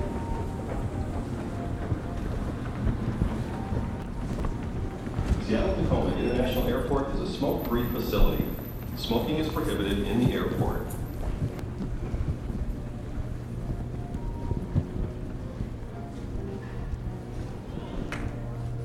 SeaTac Airport - SeaTac #2
Aboard the South Satellite shuttle subway train. I like the bilingual announcements but the ride is less than two minutes. I continued taping out to the concourse.